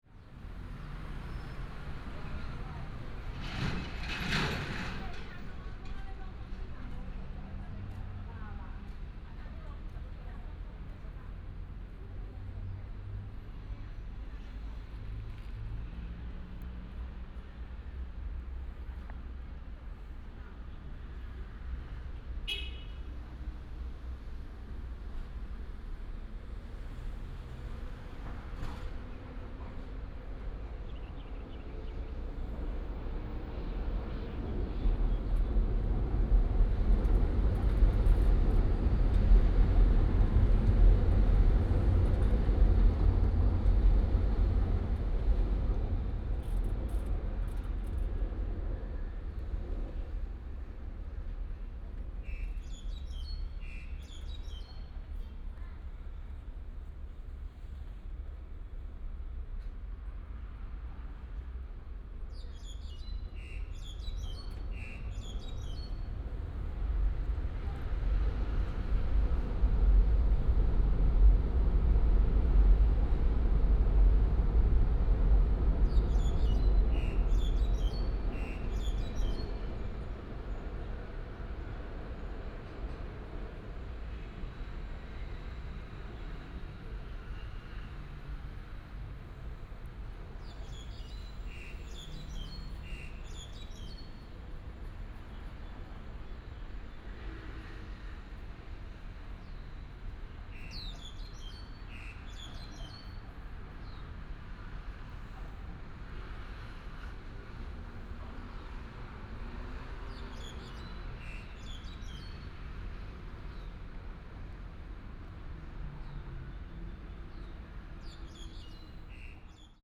Ln., Wenlin Rd., Shilin Dist., Taipei City - Next to the MRT
Next to the MRT, Next to the MRT, Traffic sound, The sound of birds